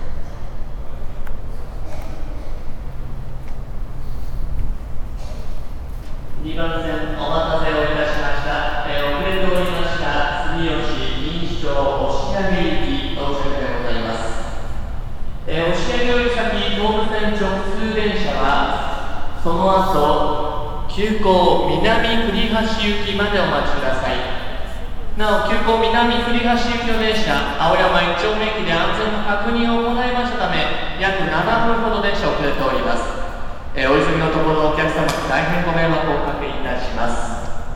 at the train station - anouncements, signs, train driving in
international city scapes - topographic field recordings